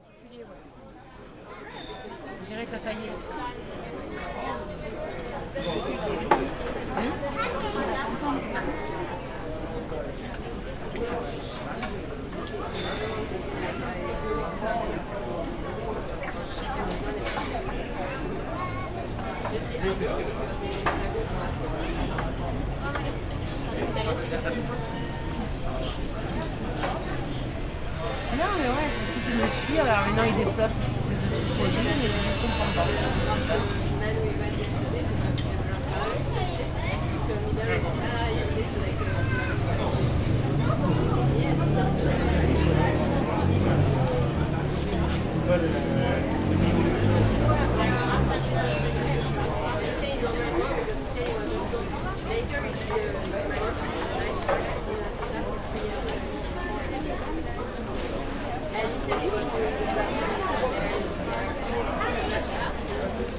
Sartène place du village
Captation : ZOOM H6
26 July 2022, 16:00